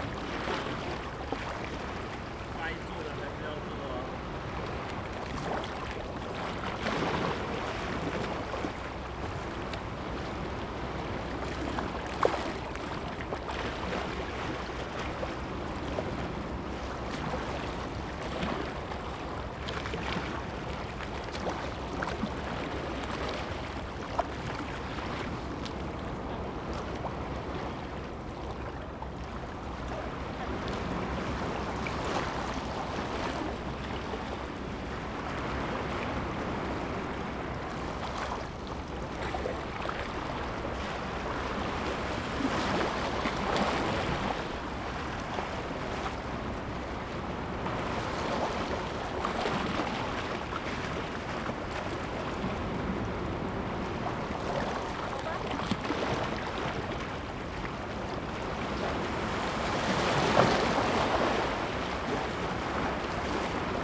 Gushan District, Kaohsiung - The waves move
Sound of the waves, Beach
Zoom H2n MS+XY
Kaohsiung City, Taiwan, 22 November 2016, 14:31